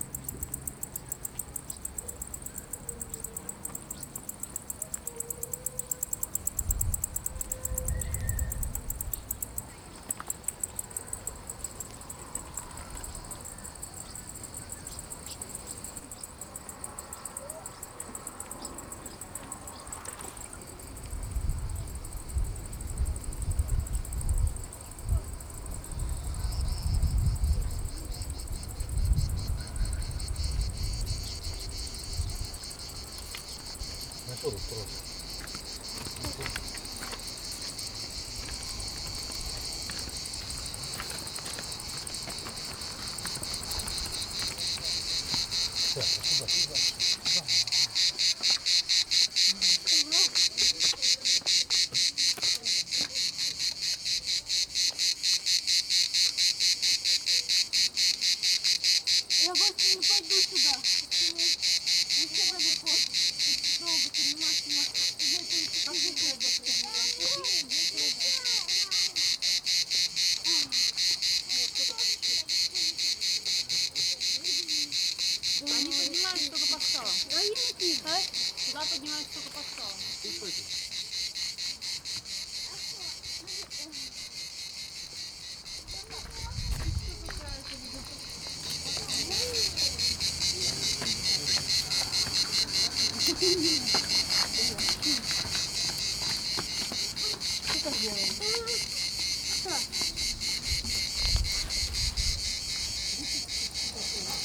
Sudak, Crimea, Ukraine - Genoese Fortress - Climbing the coast healing walk - from sea to cliff
This is a recover-hike, setting off at the beach leading straight up to the reef-lining cliffs, themselves edged by the mighty genoese fortress. as the political situation killed off the tourism drastically, you will hear us passing desparate tourist-attraction-sellers, meet locals hanging about and some other lost travellers like us. there's pebbles under our feet and the kids climb the extremely dangerous rocks which for several hundred years kept away any enemy. you follow us until the zoom recorder reaches the top, where wind and waves and the snippets of the starting nightlife -for noone- from deep down mix together into an eary cocktail.
12 July 2015